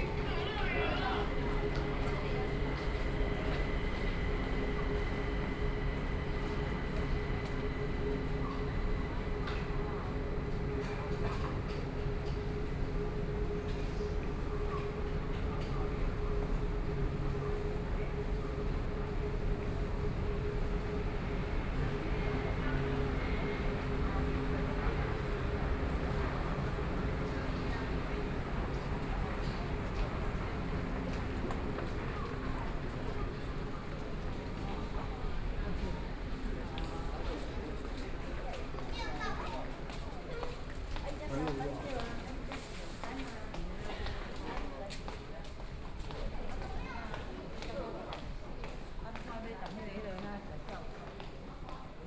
Formosa Boulevard Station, Kaohsiung City - Walking in the station
Walking in the station
Xinxing District, Kaohsiung City, Taiwan